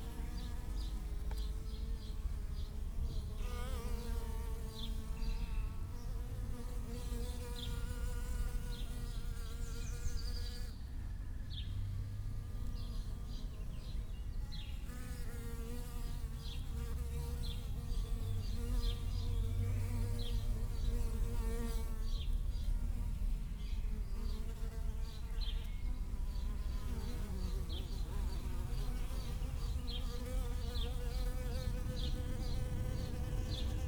allotment, Beermannstr., Treptow, Berlin - bees at little pond
bees picking up drops of water at the little pond, train passing nearby
(SD702 DPA4060)
Deutschland, European Union, 12 May 2013